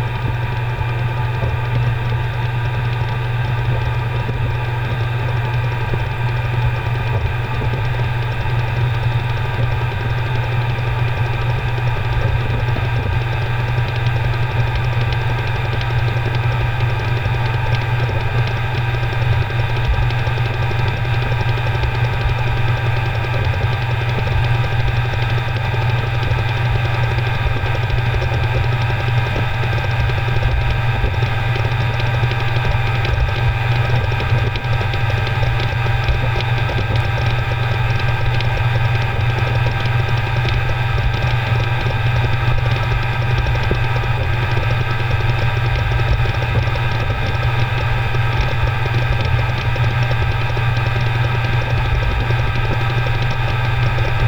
c/ San Cosme y San Damián, Madrid, Spain - 2014-04-23 HDD
I was transferring a rather large amount of data - ironically, my own
field recording archive - between two external hard drives when the
hums and clicks of the drives distracted me from staring at the
progress bar. I noticed that the two drives were making markedly
different sounds, so I reached out for my contact mics, some masking
tape and my recorder and started recording different takes of both.
Initially, I thought that the recordings would make a nice sample for
further processing, e.g., a granular synth in a live context, but
really, after listening to all the takes, I decided that they didn't
really need any post-processing. So this is simply a layering of
different takes from different places on the hard disk hulls, with no
more manipulation than a few fades.
Details:
Contact mics* -> Olympus
* The contact mics I use are the fabulous ones made by Jez Riley French